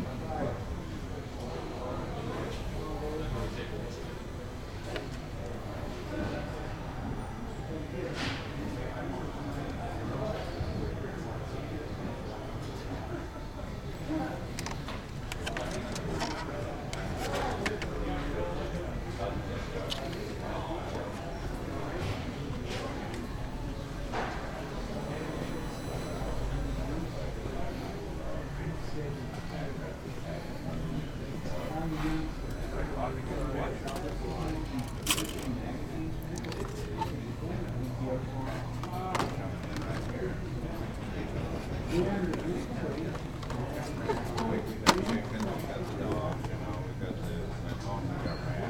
Goss - Grove, Boulder, CO, USA - McGucks